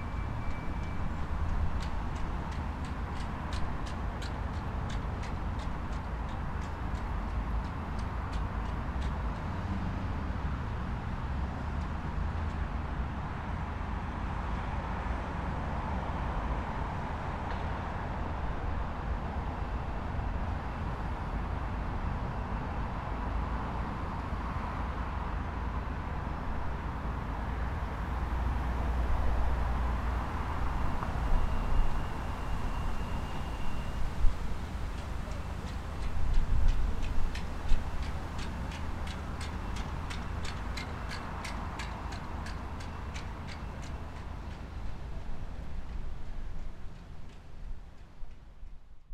Not much has changed with Queen’s University Belfast, they have opened their front doors again for limited access to the Graduate School and Library. There is some staff working around the building, but not much other information that I would know of. Standing in front of the building you can see warning signs of keeping your distance and to protect yourself. The harsh winds throwing around a metal object in the distance generated this odd feeling that our return to the city is creating a lot more energy in the environment, that we are trying to find our place again amidst the aftermath of the lockdown. We are trying to figure out what this new normal will be like, will it clash or be embraced.